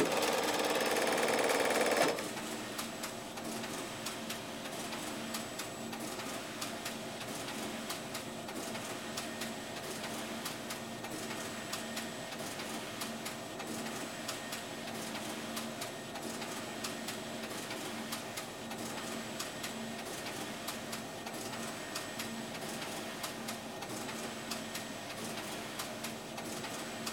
Sandra Johnson talking in the Shetland College UHI, Gremista, Lerwick, Shetland Islands, UK - Sandra Johnson explains how machine-knitted pieces are linked together using linking machines
This is Sandra Johnson talking about linking machine-knitted pieces together after they have come out of the Shima machine. The linking machine has loads of tiny hooks, which each take one stitch from the knitting. The pieces are then sewn together through these stitches by the linking machine. Sandra is explaining how the cardigan she is working on will be joined together, and I am asking her about the whole process. In the background, the shima machine churns on. This is where Sandra works as a linker; she also has a croft in Yell and her own flock of Shetland sheep. I loved meeting Sandra, who has a hand in every part of the wool industry here on Shetland, from growing the wool at the start, to seaming up knitted garments at the end. Recorded with Audio Technica BP4029 and FOSTEX FR-2LE.
6 August 2013, 12:46